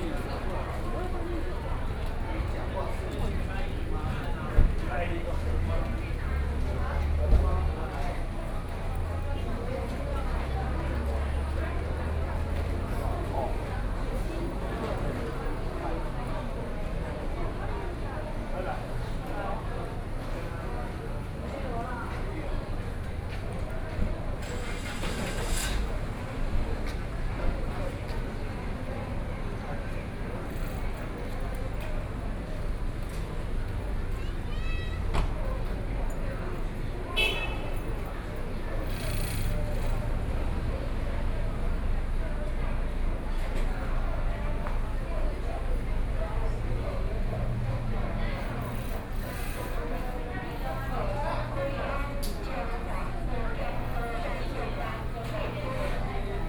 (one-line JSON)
{"title": "Yuli Station, Yuli Township - At the station", "date": "2014-09-07 15:52:00", "description": "At the station, at the exit, Tourists, Traffic Sound", "latitude": "23.33", "longitude": "121.31", "altitude": "137", "timezone": "Asia/Taipei"}